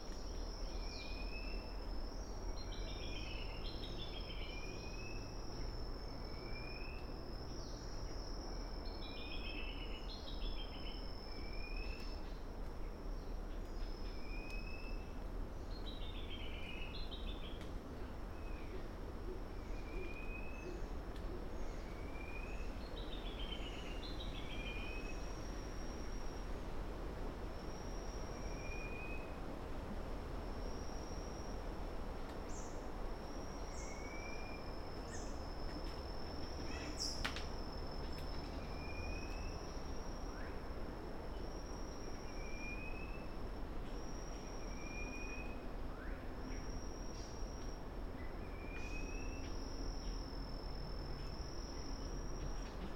Vunisea-Namara Road, Kadavu Island, Fidschi - Forest on Kadavu at ten in the morning
Recorded with a Sound Devices 702 field recorder and a modified Crown - SASS setup incorporating two Sennheiser mkh 20 microphones.